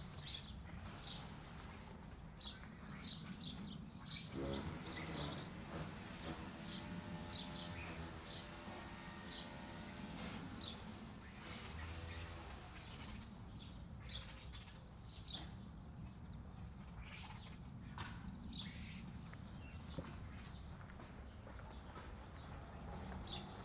Rue de Bourbuel, Niévroz, France - A walk along the street
birds, cars, tractor, sound of my footsteps on the pavement.
Tech Note : Sony PCM-M10 internal microphones.